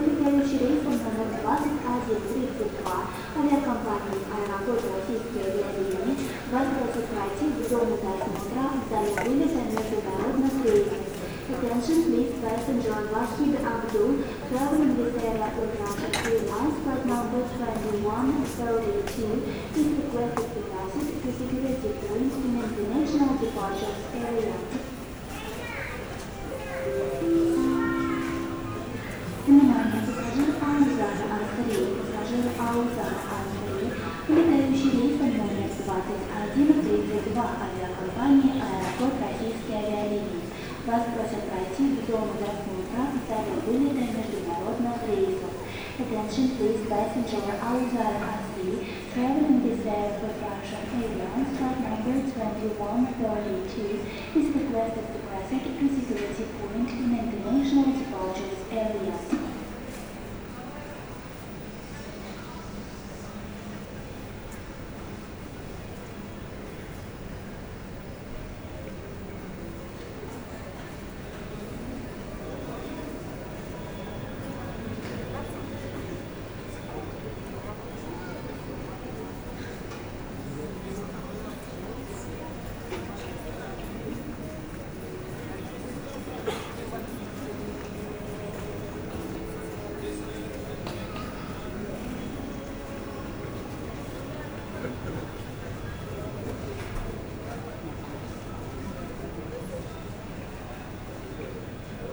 Khimki Sheremetyevo airport, Russia - Sheremetyevo airport part 2
Continuation of the recording.
Moskovskaya oblast, Russia, 15 September 2018